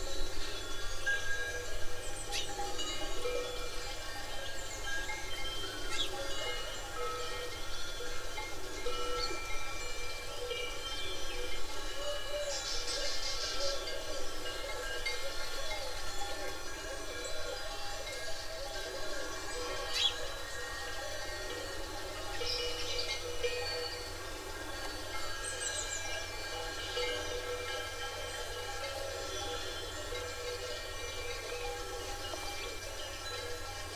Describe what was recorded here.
Dawn at Évoramonte hill, Alentejo, goats, dogs and birds make up the soundscape. Recorded with a stereo matched pair of primo 172 capsules into a SD mixpre6.